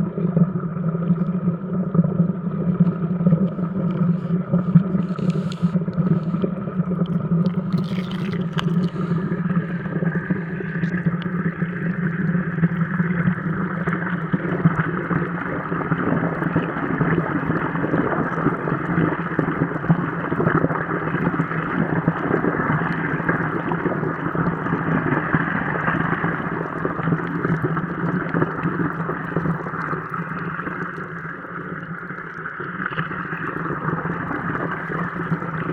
Pralognan, French Alps, a mountain river with Hydrophones
Pralognan, a mountain river with hydrophones. Pralognan, la rivière enregistrée avec des hydrophones.
France